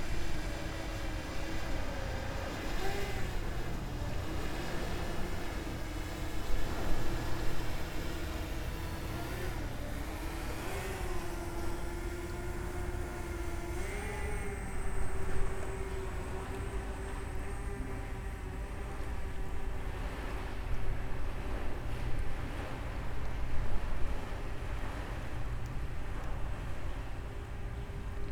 {
  "title": "Triq Dahlet Ix-Xmajjar, Il-Mellieħa, Malta - fallen cave",
  "date": "2020-09-24 16:00:00",
  "description": "ambience of a fallen cave filled with water. man who previously jumped into the cave (water if located about 5m down) swimming about in the water with a camera, randomly talking to to camera, presumably filming a review of the place. Another man flying a drone and filming the other guy. Drone getting in and out of the cave. (roland r-07)",
  "latitude": "36.00",
  "longitude": "14.37",
  "altitude": "10",
  "timezone": "Europe/Malta"
}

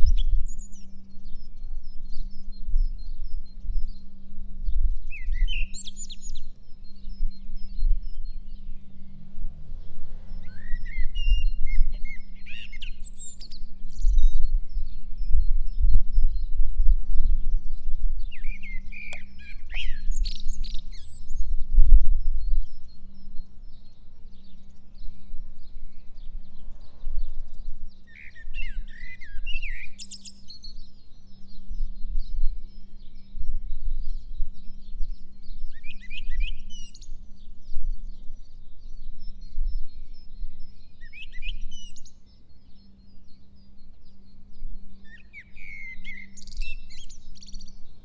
{
  "title": "Via Cal de Messa - Song of birds",
  "date": "2021-04-02 11:00:00",
  "description": "Song of Turdus merula.",
  "latitude": "46.11",
  "longitude": "12.09",
  "altitude": "314",
  "timezone": "Europe/Rome"
}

